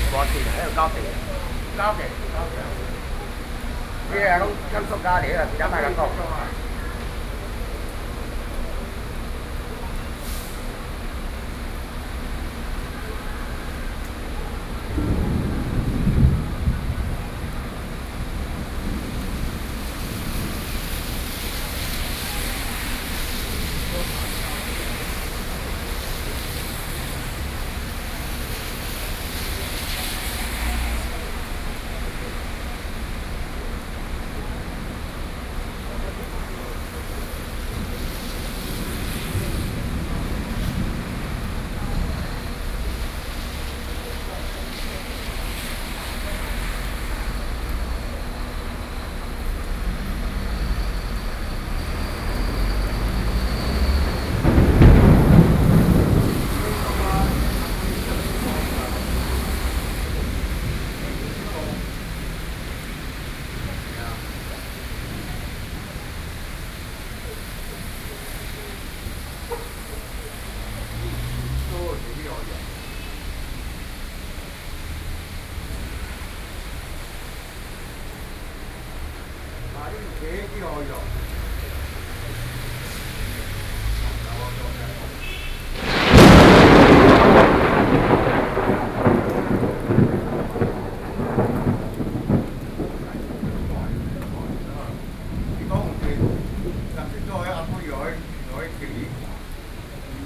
Zhongshan District, Taipei - Thunderstorm
In front of the entrance convenience stores, Sony PCM D50 + Soundman OKM II
台北市 (Taipei City), 中華民國, 6 July, 14:37